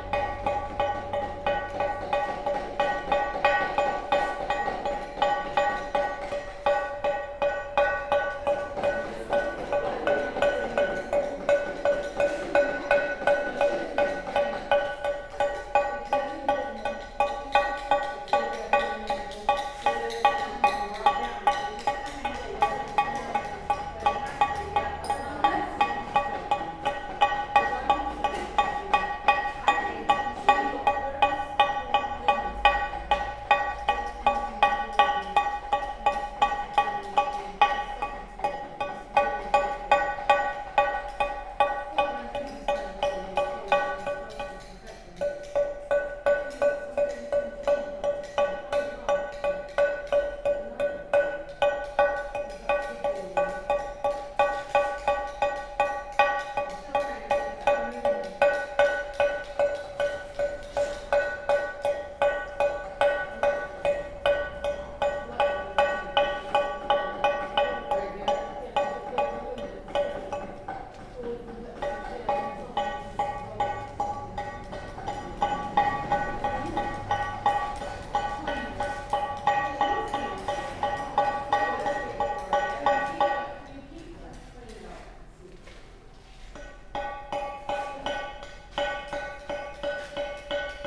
I traveled to Drill Hall Gallery specifically because this was a place where Joe Stevens created a rainy day recording; when I got there, Hannah Sofaer was running a Portland stone carving workshop. Portland stone is beautifully musical and particular. In this recording one of the workshop participants is carving out a pregnant lady shape inspired somewhat by the late artist Giacometti. Giacometti never depicted pregnancy so this aspect is a departure but in other elements it is similar to his ladies... the Portland stone is very hard and must be chipped away in tiny increments as you can hopefully hear in the recording. You can also hear the busy road right by the workshop space. The workshop is amazing - Drill Hall Project Space - a large structure adjacent to the impressive Drill Hall Gallery space, full of comrades chipping away at huge blocks of stone with selected chisels. I spoke mostly to Hannah in between recording the amazing sounds of the sonic stone.
Portland, Dorset, UK, 24 July 2015